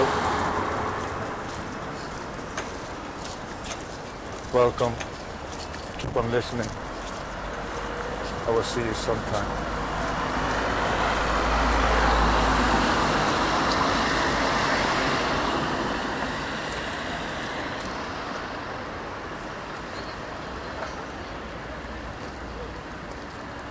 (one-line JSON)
{"title": "dkfrf: chinese new year, Amsterdam Feb.7 2008 11pm - dkfrf: chinese new year", "latitude": "52.37", "longitude": "4.90", "altitude": "5", "timezone": "GMT+1"}